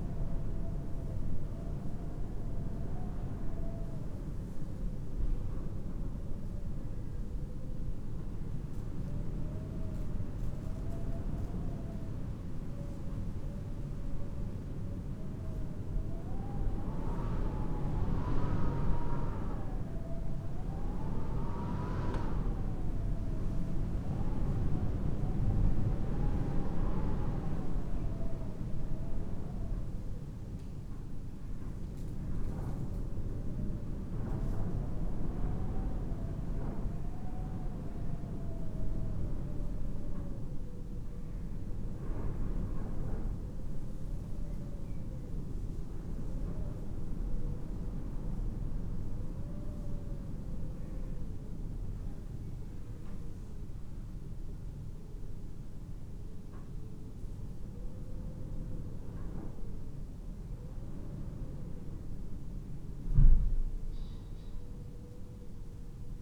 St. Thomas Oldridge Chapel, Oldridge Rd, United Kingdom - wind at the altar
St. Thomas Oldridge Chapel near Whitestone recorded to Olympus LS 14 via a pair of Brady omni (Primo) mics spaced on a coathanger on the altar facing into the main nave. Typical spring day, sunshine, rain, breezy. Recorded at about 2.15 pm
3 April 2018